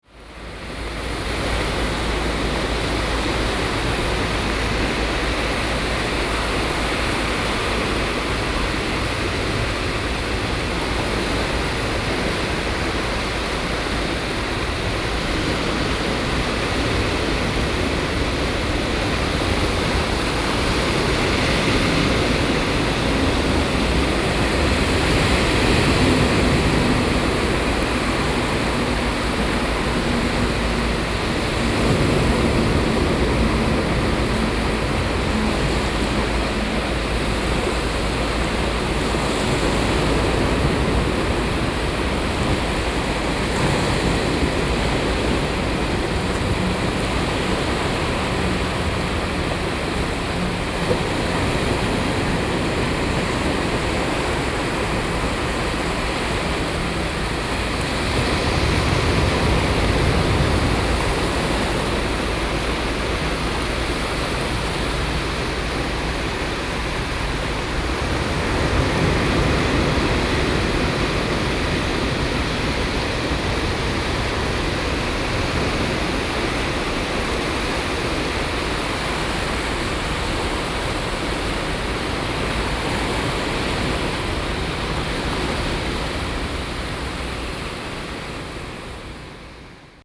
{"title": "新金山海水浴場, Jinshan District - the waves", "date": "2012-07-11 08:07:00", "description": "Standing on the bank, sound of the waves\nZoom H4n+Rode NT4(soundmap 20120711-24)", "latitude": "25.23", "longitude": "121.65", "altitude": "7", "timezone": "Asia/Taipei"}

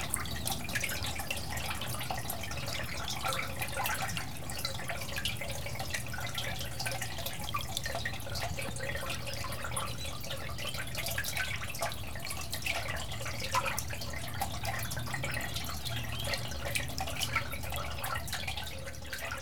{"title": "Morasko, Poligonowa Road - brook activity in a concrete pipe", "date": "2013-04-25 11:34:00", "description": "a fragile, sparkling, whispering brook reverberated in a concrete pipe.", "latitude": "52.49", "longitude": "16.91", "altitude": "97", "timezone": "Europe/Warsaw"}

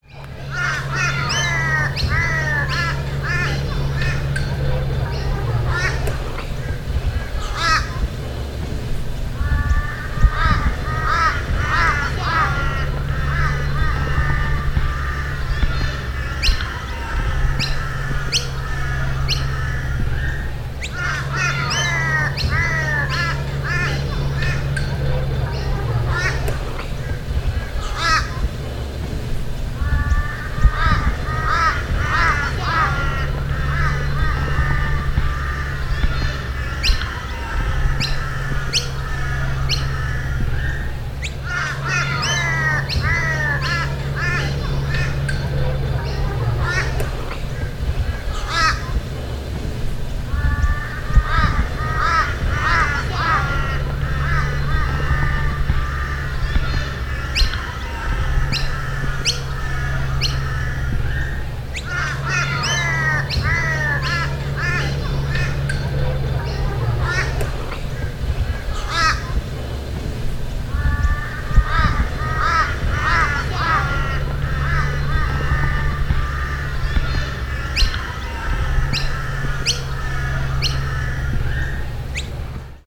{"title": "Currumbin Waters, QLD, Australia - Birds in the trees", "date": "2015-04-16 10:30:00", "description": "A group of children recording the sounds of birds in the trees on a warm, autumn morning at school. You can hear Crows & Noisy Miners and children in the playground in the distance.\nPart of an Easter holiday sound workshop run by Gabrielle Fry, teaching children how to use equipment to appreciate and record sounds in familiar surroundings. Recorded using a Rode NTG-2 and Zoom H4N.\nThis workshop was inspired by the seasonal sound walks project, run by DIVAcontemporary in Dorset, UK.", "latitude": "-28.15", "longitude": "153.46", "altitude": "13", "timezone": "Australia/Brisbane"}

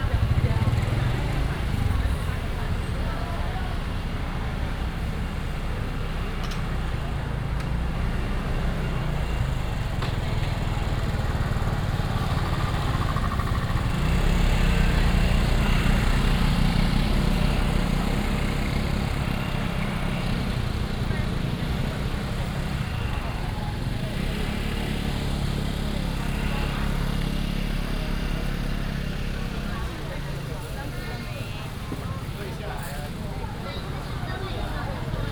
{"title": "Minsheng Rd., Dahu Township - Walking in the market", "date": "2017-09-24 07:52:00", "description": "Walking in the market, Binaural recordings, Sony PCM D100+ Soundman OKM II", "latitude": "24.42", "longitude": "120.87", "altitude": "282", "timezone": "Asia/Taipei"}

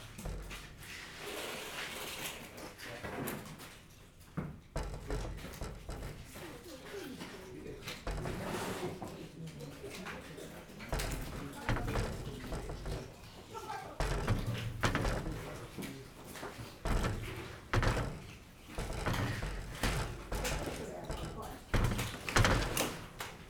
{"title": "Guangming Rd., Fangyuan Township - Small villages", "date": "2014-01-04 10:35:00", "description": "A group of old women are digging oysters, The wind and the sound vibrations generated window, Zoom H6", "latitude": "23.93", "longitude": "120.32", "altitude": "7", "timezone": "Asia/Taipei"}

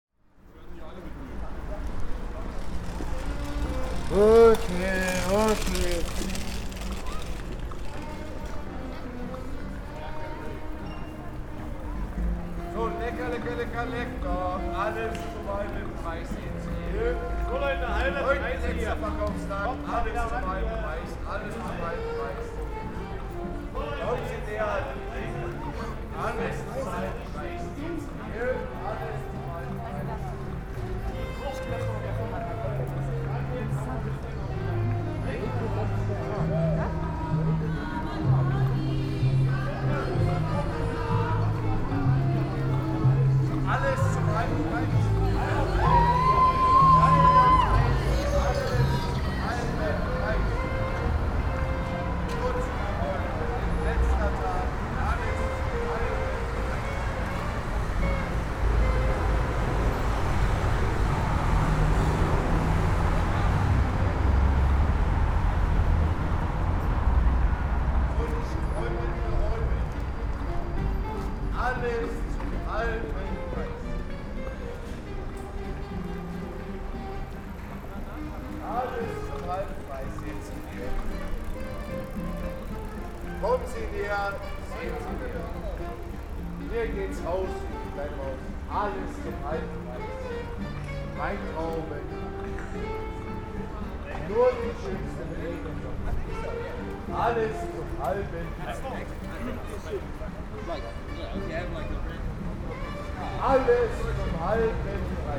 {"title": "Schönhauser Allee, Berlin, Deutschland - berlin groove schönhauser", "date": "2020-11-14 18:30:00", "description": "berlin_groove_schönhauser : it's around 6pm, the fruit seller in front of the Schönhauserarcaden at the transition to the U2 is praising his goods at a reduced price and starts to do so more and more in the groove of two wonderful street musicians (saxophone and guitar).", "latitude": "52.55", "longitude": "13.41", "altitude": "55", "timezone": "Europe/Berlin"}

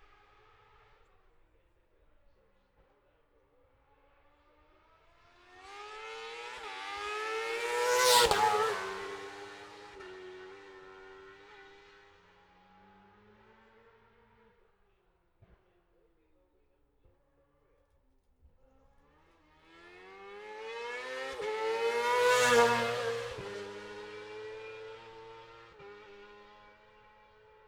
{"title": "Jacksons Ln, Scarborough, UK - olivers mount road racing ... 2021 ...", "date": "2021-05-22 10:50:00", "description": "bob smith spring cup ... F2 sidecars practice ... dpa 4060s to MixPre3 ...", "latitude": "54.27", "longitude": "-0.41", "altitude": "144", "timezone": "Europe/London"}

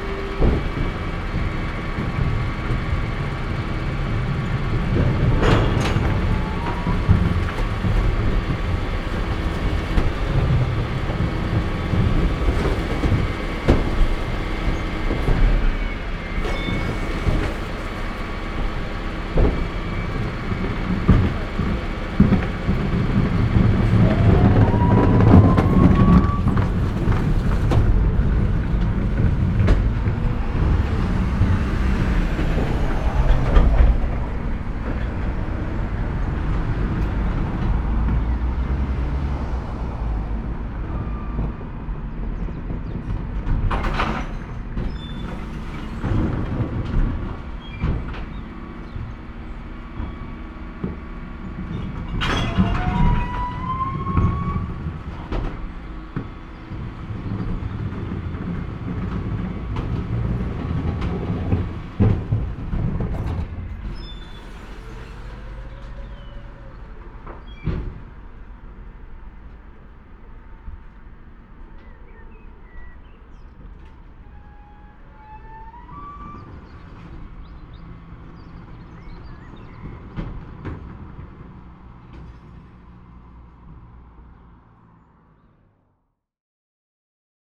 {"title": "The Bin Men, Malvern Worcestershire, UK - Recycling Bin Collection", "date": "2021-04-21 08:52:00", "description": "A different bin collection with better and more varied noises. The mics are about 3 metres from the truck as it passes by heading down the street.", "latitude": "52.08", "longitude": "-2.33", "altitude": "118", "timezone": "Europe/London"}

